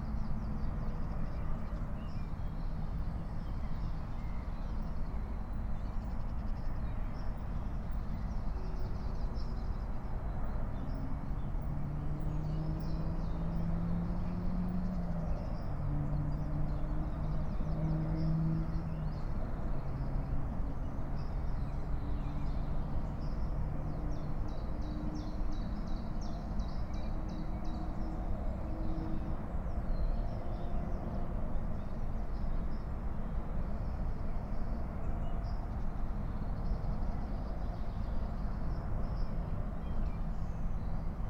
19:48 Berlin Buch, Lietzengraben - wetland ambience